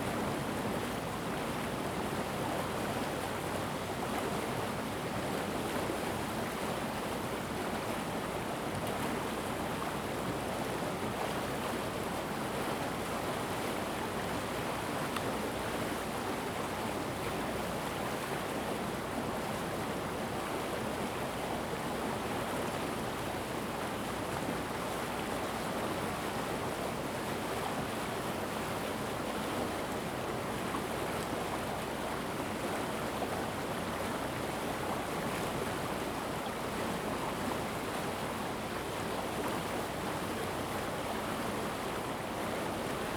福興村, Ji'an Township - Streams
Streams of sound, Very Hot weather
Zoom H2n MS+XY